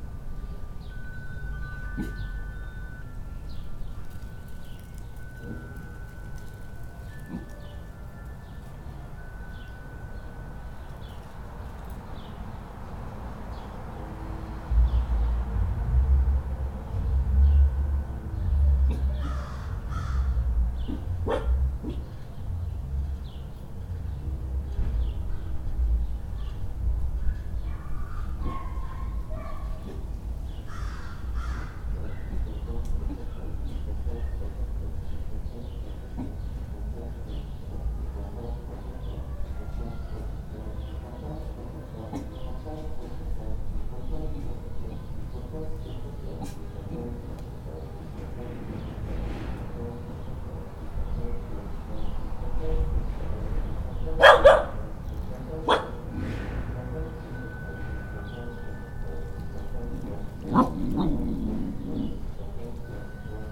Wood St, Providence, RI, USA - Brass band playing down the street, Rhoda the puppy barking
Backyard ambience with Rhoda the puppy and a brass band playing down the street on this sunny spring Saturday afternoon. Some local car noise but it's a rare time when you can't clearly hear the nearby highway. A few loud, distorted dog barks in this recording, I don't think Rhoda liked the sousaphone very much. Recorded with Olympus LS-10 and LOM mikroUši